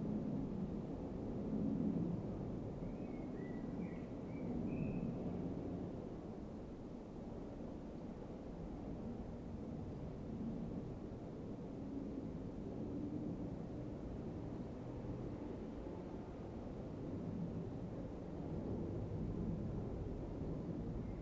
St Leonard's Church, Woodcote - Meditation in St Leonard's Church
A 20 minute mindfulness meditation following the breath. Recorded employing a matched pair of Sennheiser 8020s either side of a Jecklin Disk and a Sound Devices 788T.